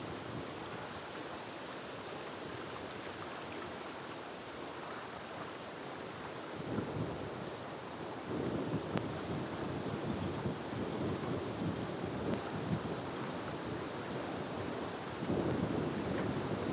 {"title": "Savanna Army Depot + Wildlife Refuge - Wind in trees on bluff overlooking the Mississippi River at the former Savanna Army Depot", "date": "2013-03-13 13:15:00", "description": "Recorded at the Black Oak Dune Overlook at the Savanna Army Depot (former) which is being slowly converted into a wildlife refuge. A large, unknown number of unexploded ordnance (artillery shells and grenades mostly from WWI) remain buried in the ground here. Last bits of ice flowed down river, the sound of water lapping at the frozen shore can be heard beneath the gusts and noisy pines.", "latitude": "42.19", "longitude": "-90.30", "altitude": "193", "timezone": "America/Chicago"}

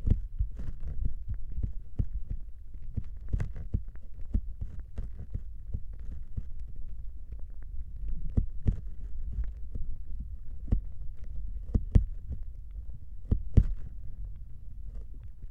{"title": "Griūtys, Lithuania, contact with ice", "date": "2019-03-01 16:15:00", "description": "contact mics on ice", "latitude": "55.46", "longitude": "25.65", "altitude": "129", "timezone": "Europe/Vilnius"}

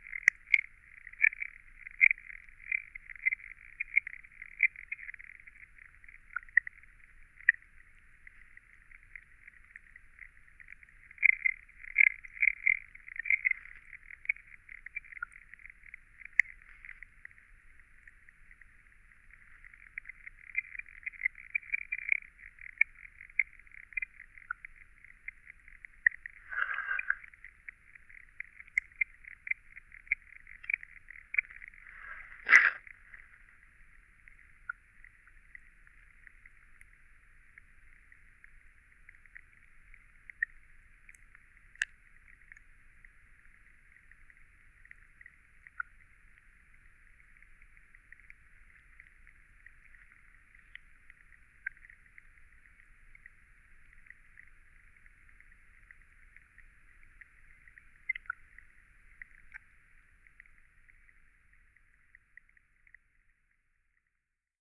{"title": "Cape Farewell Hub The WaterShed, Sydling St Nicholas, Dorchester, UK - Sydling Pond :: Below the Surface 1", "date": "2022-04-10 09:15:00", "description": "The WaterShed - an ecologically designed, experimental station for climate-focused residencies and Cape Farewell's HQ in Dorset.", "latitude": "50.79", "longitude": "-2.52", "altitude": "105", "timezone": "Europe/London"}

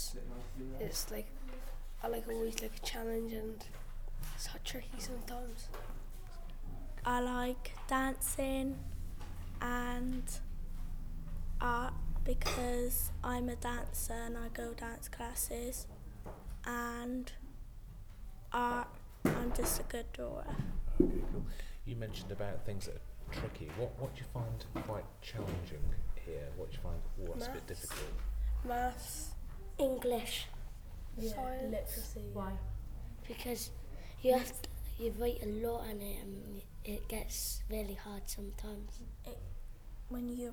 {"title": "Classroom class 5/6S introductions", "date": "2011-03-21 13:04:00", "latitude": "50.39", "longitude": "-4.10", "altitude": "72", "timezone": "Europe/London"}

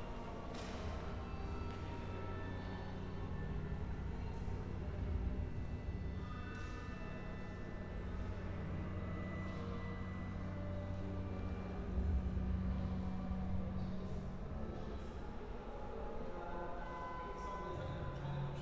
{"title": "Humboldt-Forum, Schloßplatz, Berlin, Deutschland - room ambience", "date": "2021-07-20 12:09:00", "description": "opening day, broom ballet (Besenballett) preparing for performance, room near entrance, sounds of an exhibition, people passing by, ambience\n(Sony PCM D50, Primo EM272)", "latitude": "52.52", "longitude": "13.40", "altitude": "44", "timezone": "Europe/Berlin"}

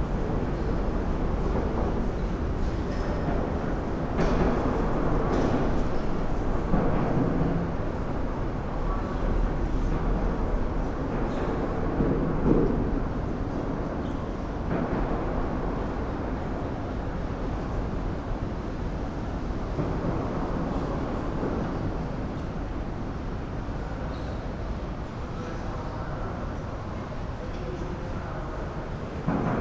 {"title": "Yancheng District, Kaohsiung - Under the bridge", "date": "2012-04-05 17:19:00", "description": "Under the bridge, Sony PCM D50", "latitude": "22.63", "longitude": "120.29", "altitude": "4", "timezone": "Asia/Taipei"}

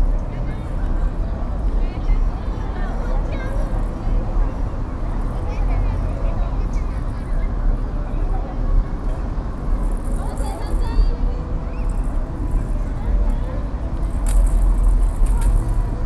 {"title": "Ignacio Zaragoza, Centro, León, Gto., Mexico - Plaza Expiatorio aún durante la pandemia de COVID-19.", "date": "2021-10-23 20:34:00", "description": "Plaza Expiatorio during the COVID-19 pandemic still.\nNow with more people because 41.43% of the country is fully vaccinated to this date.\nYou can hear the toy of a child playing nearby, people coming and going, cars passing, a traffic officer, among other things.\nI made this recording on October 23rd, 2021, at 8:34 p.m.\nI used a Tascam DR-05X with its built-in microphones.\nOriginal Recording:\nType: Stereo\nPlaza Expiatorio aún durante la pandemia de COVID-19.\nAhora ya con más gente debido a que el 41,43% del país está completamente vacunada a esta fecha.\nSe escucha el juguete de un niño jugando en la cercanía, gente que va y viene, carros pasando, un oficial de tránsito, entre varias cosas más.\nEsta grabación la hice el 23 de octubre de 2021 a las 20:34 horas.\nUsé un Tascam DR-05X con sus micrófonos incorporados.", "latitude": "21.12", "longitude": "-101.68", "altitude": "1803", "timezone": "America/Mexico_City"}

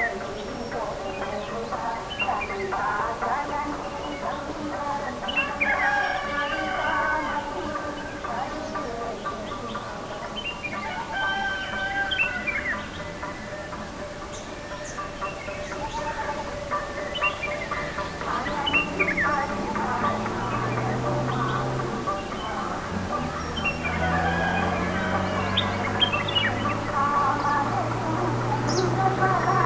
{"title": "Nullatanni, Munnar, Kerala, India - dawn Munnar - over the valley 3", "date": "2001-11-06 17:42:00", "description": "dawn Munnar - over the valley part 3. All the Dawn Munnar parts are recorded in one piece, but to cut them in peaces makes it easier to handle.\nMunnar is situated in a lustfull green valley surrounded by tes bushes. Munnar istself is a rather small and friendly town. A pleasant stay is perhaps not garanteed, but most likely.", "latitude": "10.09", "longitude": "77.06", "altitude": "1477", "timezone": "Asia/Kolkata"}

